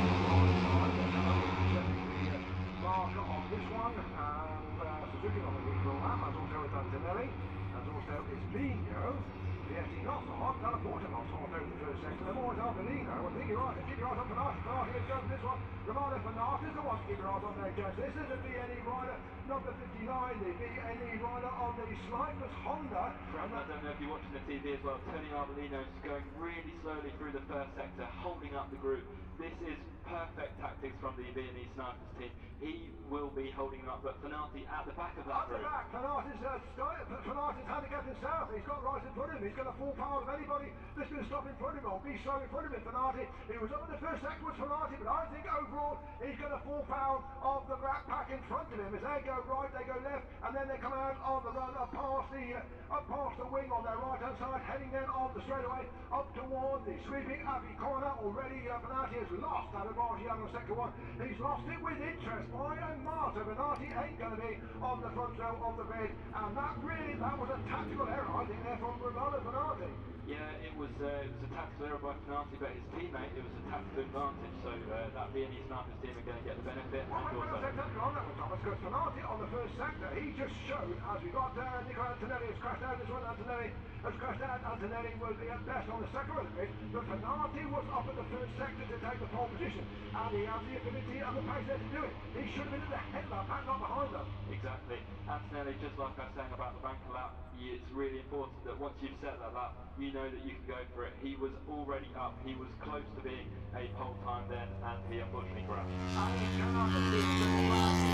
Silverstone Circuit, Towcester, UK - british motorcycle grand prix 2019 ... moto three ... q2 ...
british motorcycle grand prix ... moto three ... qualifying two ... and commentary ... copse corner ... lavalier mics clipped to sandwich box ...